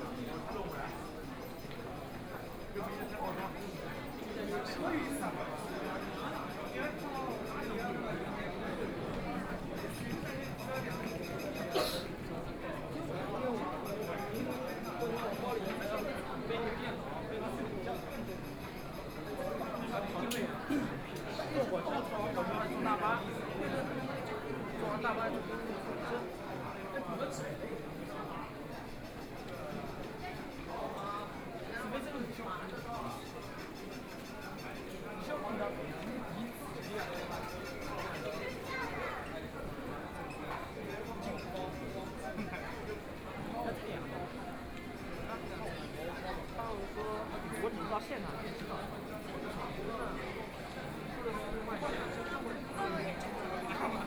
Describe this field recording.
From the subway underground passage into, After many underground shopping street, Enter the subway station, The crowd, Binaural recording, Zoom H6+ Soundman OKM II